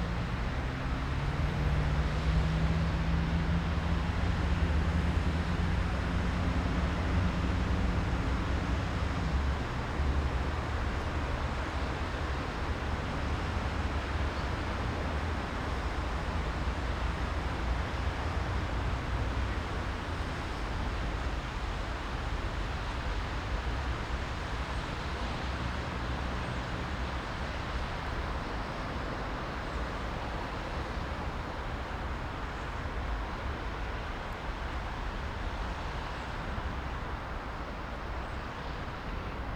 Olsztyn, Polska - West train station (1)
City rush. Two train announcements. Train arrival and departure. Snow is melting.
5 February 2013, ~16:00